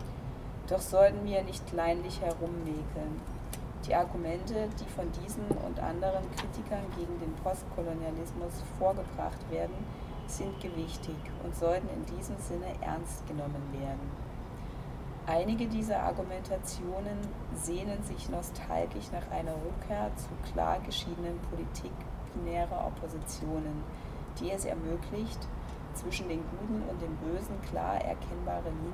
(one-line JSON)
{"title": "Am Karlsbad, Berlin, Deutschland - Lesegruppedololn XII", "date": "2018-07-11 14:30:00", "description": "The reading group \"Lesegruppedololn\" reads texts dealing with colonialism and its consequences in public space. The places where the group reads are places of colonial heritage in Berlin. The Text from Stuart Hall „When was postcolonialism? Thinking at the border\" was read on the rooftop oft he former „ Afrika Haus“ headquarters of the German Colonial Society.", "latitude": "52.50", "longitude": "13.37", "altitude": "37", "timezone": "Europe/Berlin"}